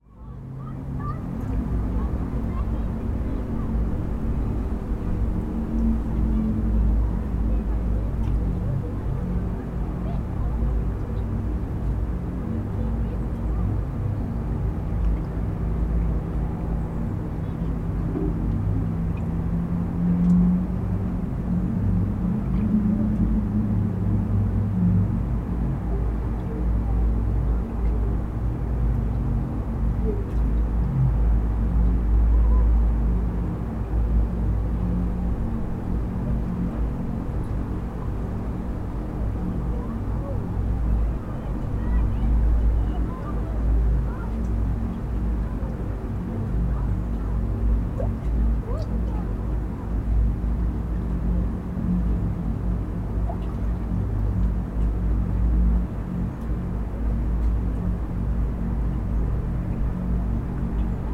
Lai, Pärnu, Pärnu maakond, Eesti - Inside the pipe of the concrete block
Inside the pipe of the concrete block. On the bank of the Pärnu river. Some children play nearby. Weather was quite stormy. Mic was placed in the pipe. Recorder: Zoom H6, MSH-6 mic capsule
11 October 2019